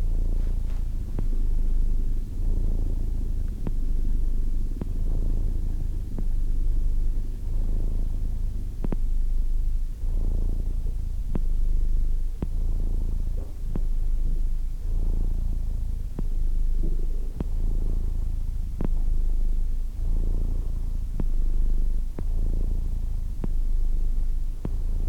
Linden, Randburg, South Africa - My Purring Cat
Lying in Bed. My cat next to me. Primo EM172's to Sony ICD-UX512F.
7 August 2016